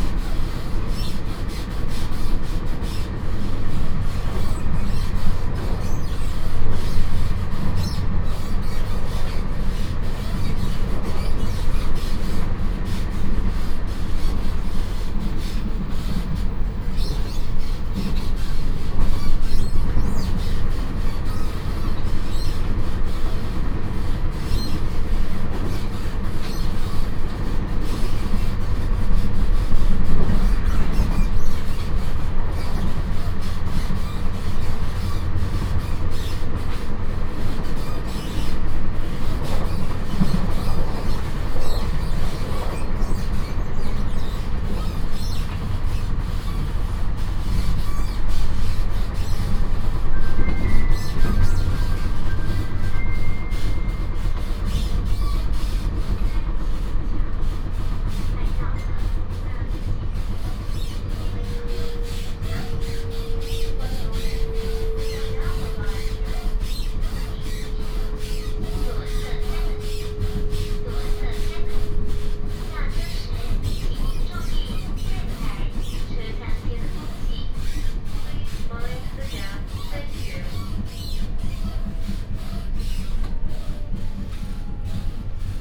Hsinchu City, Taiwan - Local Train
from Hsinchu Station to Sanxingqiao Station